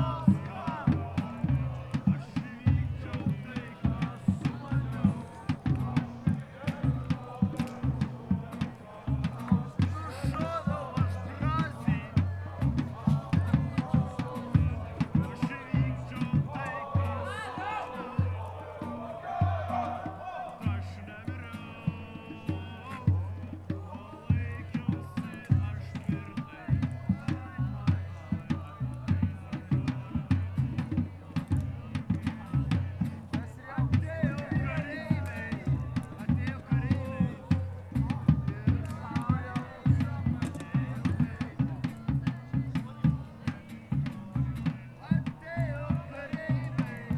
some drumming, singing, speaking landscape from the eve of heathen festival Jore